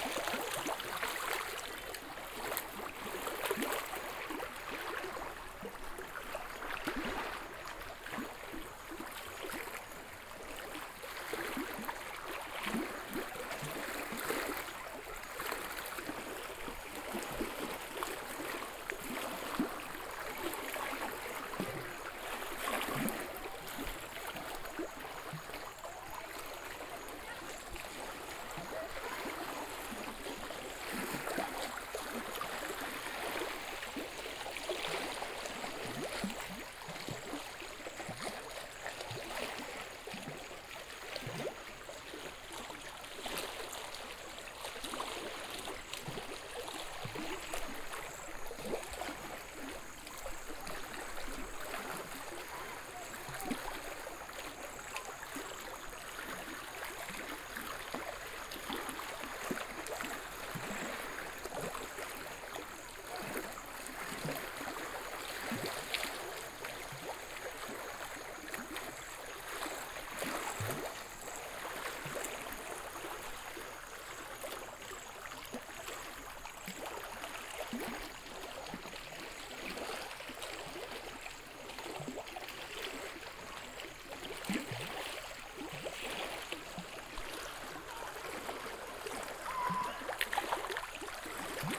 Lake shore, Kariba Lake, Sinazongwe, Zambia - Windy waves leaking at rocks...
when i arrived in Sinazongwe in June, water levels of the lake were still very high... also the soundscapes at the lake were very different from what i had experienced in August 2016...
1 July 2018, 16:34